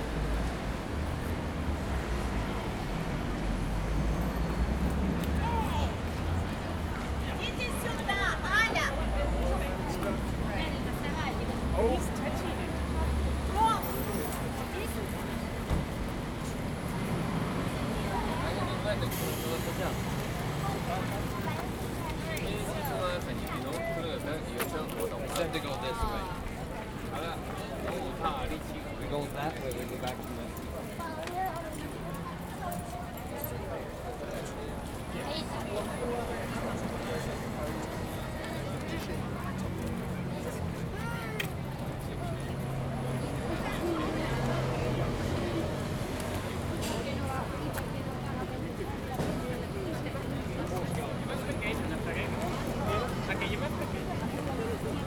Horse Guards Parade and Whitehall
Walking from Horse Guards Road across Horse Guards Parade, through Horse Guards (the archway) and onto Whitehall, then standing between two mounted cavalry troopers of The Queen's Life Guard. Recorded on a Zoom H2n.